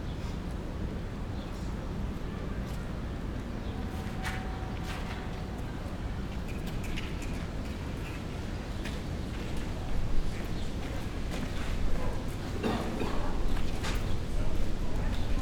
Unnamed Road, New York, NY, USA - Glade Arch, Central Park
Glade Arch, Central Park.